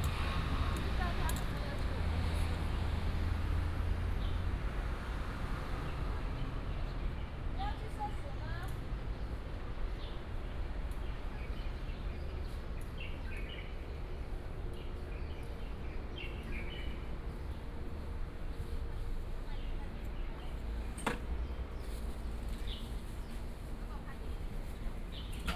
Aly., Ln., Sec., Heping E. Rd., Da’an Dist. - Walking in a small alley
Walking through the market, Walking in a small alley
Da’an District, Taipei City, Taiwan, 21 July 2015, ~08:00